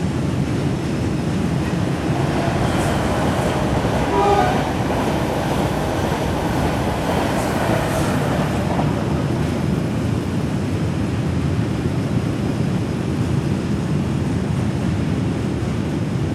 Saint-Avre, trains.
Saint-Avre, trains and cars in the background. Recorded on minidisc in 1999.
France